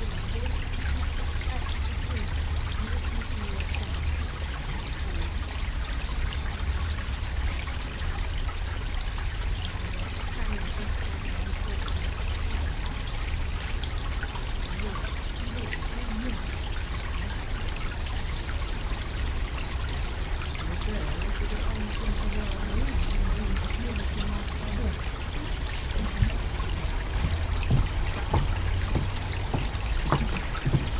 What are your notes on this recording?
kleine talidylle - plätschern der itter - vogel zwitschern - im hintergrund verkehr und flugzeuge, fussgänger gehen über holzbrücke, - soundmap nrw, project: social ambiences/ listen to the people - in & outdoor nearfield recordings